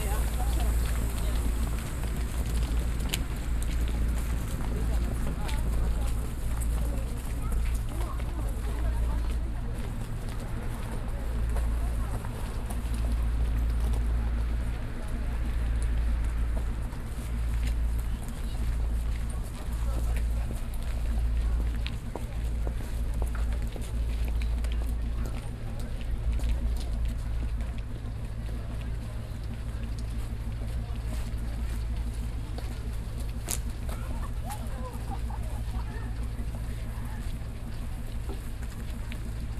Train arrives at station, Tartu, Estonia